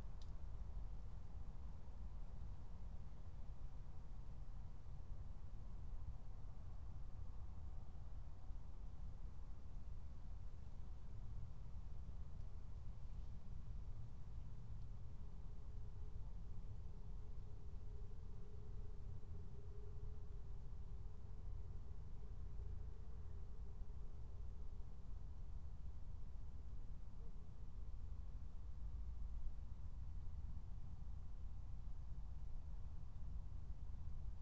Berlin, Tempelhofer Feld - former shooting range, ambience
00:00 Berlin, Tempelhofer Feld